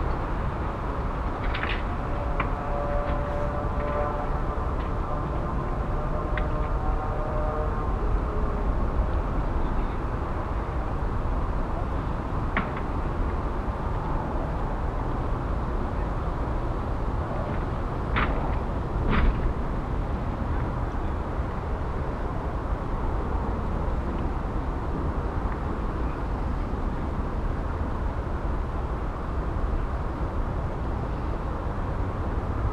heiligenhaus, wiel, gang durch feld
gang durch durchfrostetes feld, morgens
nahe flugfeld
project: :resonanzen - neanderland - soundmap nrw: social ambiences/ listen to the people - in & outdoor nearfield recordings, listen to the people